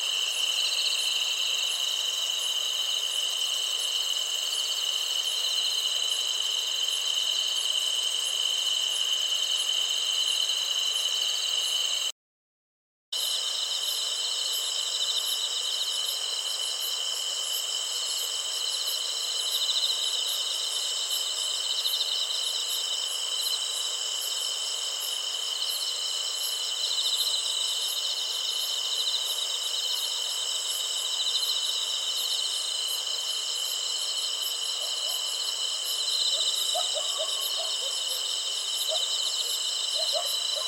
한밤중 곤충들 Midnight Insect-life
8월의 한밤줄 소리...
Chuncheon, Gangwon-do, South Korea, 22 August 2018